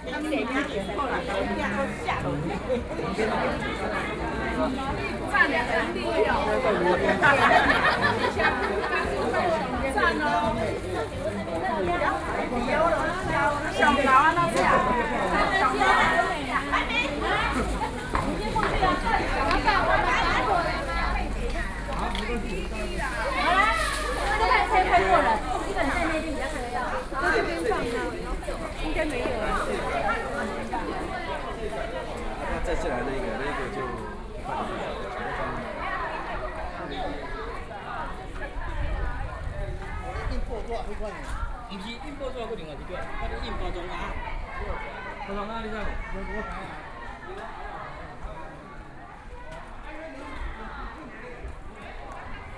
No., Shífēn St, New Taipei City - Soundwalk